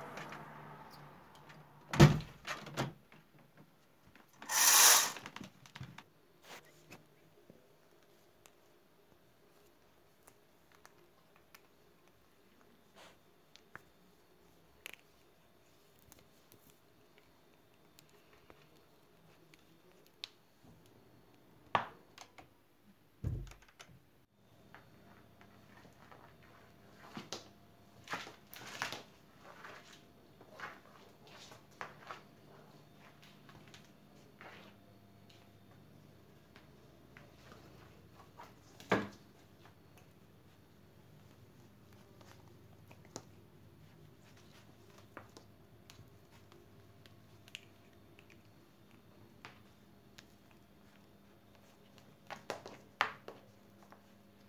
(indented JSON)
{
  "title": "Fričova 6 - Flat sounds with a cat",
  "date": "2020-04-14 14:30:00",
  "description": "the sounds of my flat",
  "latitude": "49.22",
  "longitude": "16.58",
  "altitude": "251",
  "timezone": "Europe/Prague"
}